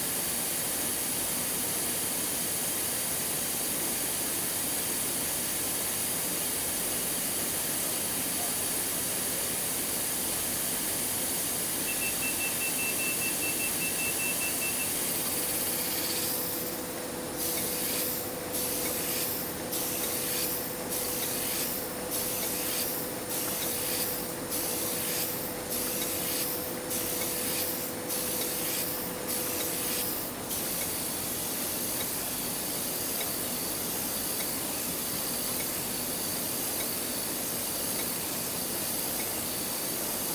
Williams Press, Maidenhead, Windsor and Maidenhead, UK - The sound of the KNITSONIK Stranded Colourwork Sourcebook covers being printed
This is the sound of the covers of the KNITSONIK Stranded Colourwork Sourcebook on the press at Williams Press, Berkshire. The sound was recorded with my EDIROL R-09 sitting underneath the out-tray of a giant Heidelberg Speedmaster.
October 2014